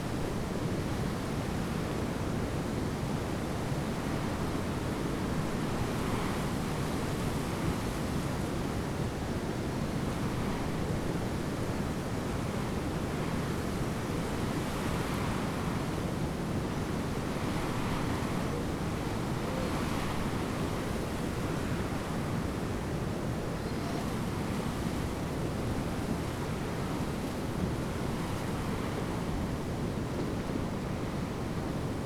mirns: mirnser kliff - the city, the country & me: reed bends in the wind
reed bends in the wind
the city, the country & me: june 23, 2013
Mirns, The Netherlands